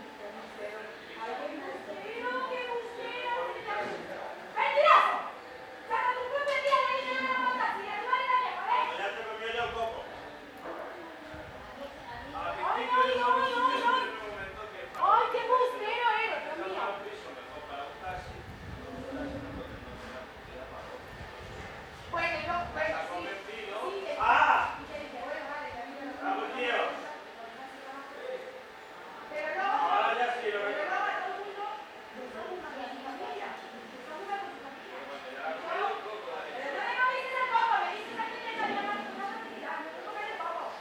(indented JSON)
{
  "title": "Madrid, Spain - Madrid courtyard arguments",
  "date": "1995-08-22 19:30:00",
  "description": "Cheap hotel in Madrid, arguments between woman and husband, singing...\nsony MS microphone. Dat recorder",
  "latitude": "40.42",
  "longitude": "-3.70",
  "altitude": "671",
  "timezone": "Europe/Madrid"
}